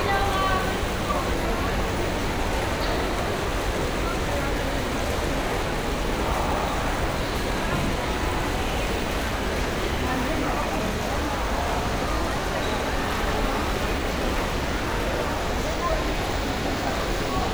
Kassel Citypoint 2nd floor
standing on the 2nd floor, watching the water fountains in the basement. ZoomH4 + OKM binaural mics
Kassel, Germany